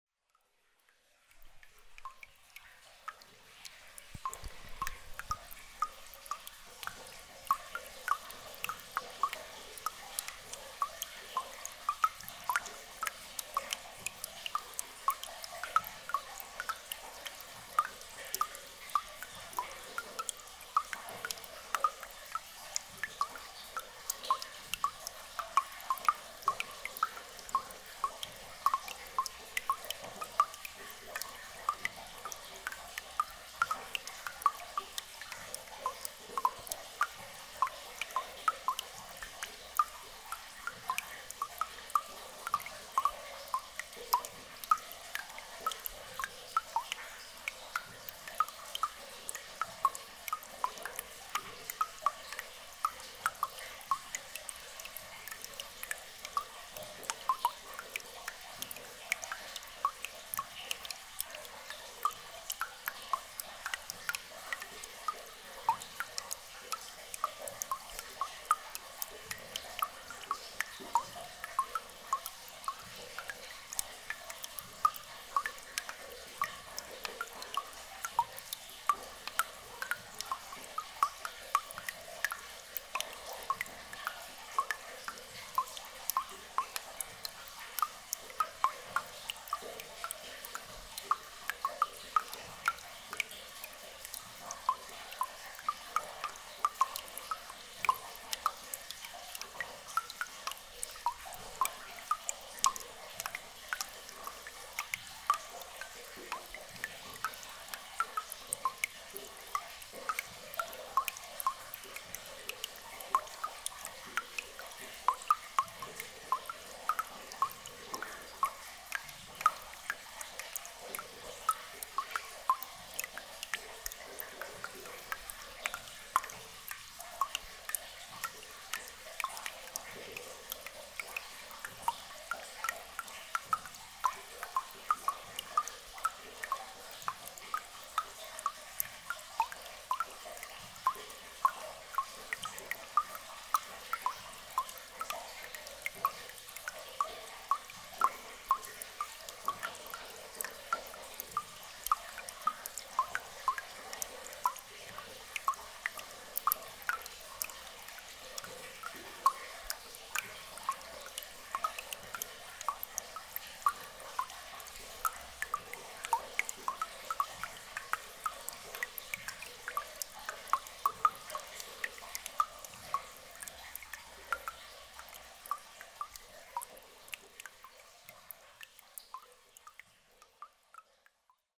Volmerange-les-Mines, France - Into the mine
Into the underground mine, there's often this ambience with soft water flowing from everywhere. We are here in an extremely hard to reach underground mine, called mine Kraemer.